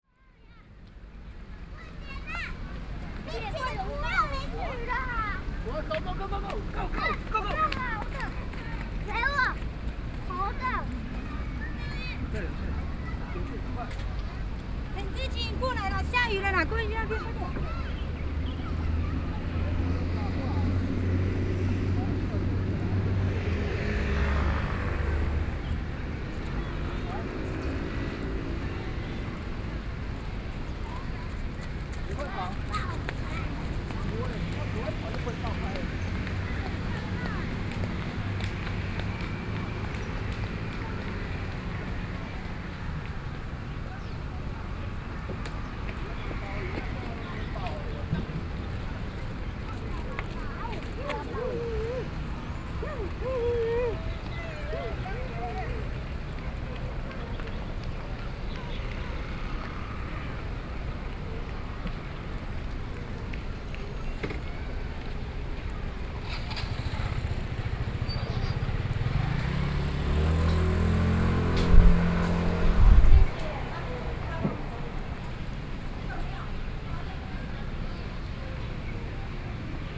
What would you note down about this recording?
Forthcoming when it rains, Because the people in the park began to leave and escape the rain, Binaural recordings, Sony PCM D50 + Soundman OKM II